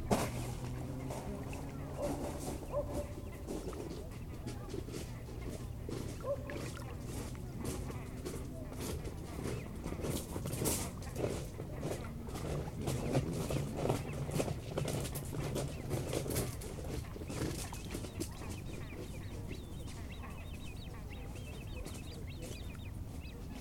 Le soleil se couche derrière la montagne de la Charvaz, au bord du lac du Bourget quelques promeneurs empruntent le chemin au fil e l'eau les pas font crisser les graviers, quelques jeunes grèbes piaillent sur le lac.
France métropolitaine, France